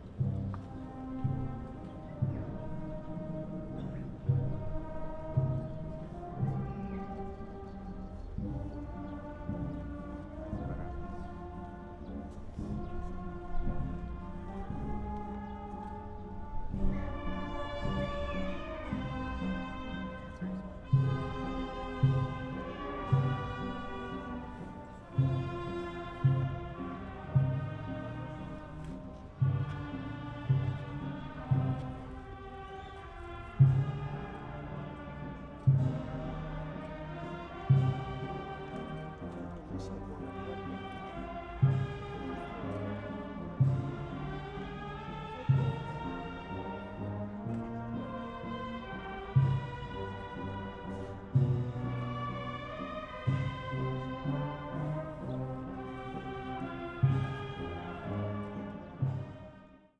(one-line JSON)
{"title": "Dingli, Malta - passion play, procession", "date": "2017-04-08 17:50:00", "description": "a passion play procession heard in the streets of Dingli, Malta\n(SD702 AT BP4025)", "latitude": "35.86", "longitude": "14.38", "altitude": "233", "timezone": "Europe/Malta"}